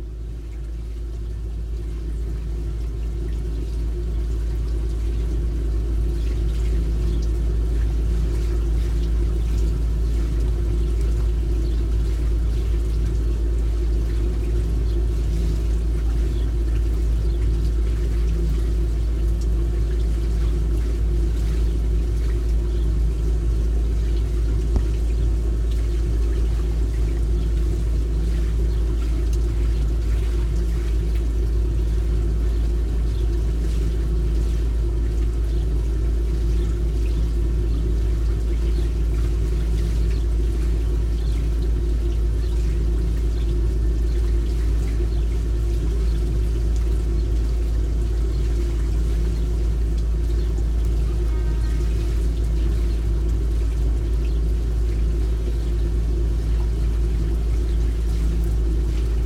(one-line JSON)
{"title": "N Factory St, Enterprise, KS, USA - Hoffman Grist Mill (Water Wheel)", "date": "2017-08-27 15:12:00", "description": "Water sounds and rumbling from the water wheel, located on the north side of the reconstructed Hoffman Grist Mill in Enterprise. A sump pump pulls the water out of the small reservoir, below the wheel, and carries it to the top. The force of the water hitting the blades causes the wheel to turn. The operator of the mill says the generated power still greatly exceeds the electricity that powers the pump. Horn from nearby diesel train, operated by the Abilene & Smoky Valley Railroad. Drop of water hits left mic at 0:28. Stereo mics (Audiotalaia-Primo ECM 172), recorded via Olympus LS-10.", "latitude": "38.91", "longitude": "-97.12", "altitude": "349", "timezone": "America/Chicago"}